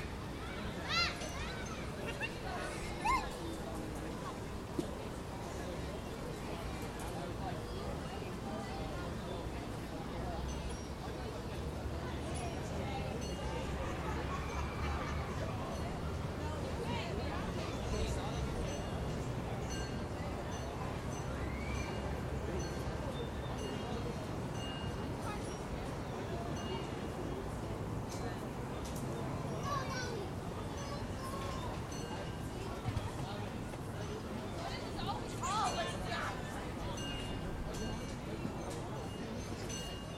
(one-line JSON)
{"title": "Saint Stephens Green, Dublin, Co. Dublin, Ireland - Summer Afternoon, City Park, Central Dublin", "date": "2013-07-18 14:00:00", "description": "City park - rare sunny afternoon in Dublin - people in droves sitting about on the grass.", "latitude": "53.34", "longitude": "-6.26", "altitude": "14", "timezone": "Europe/Dublin"}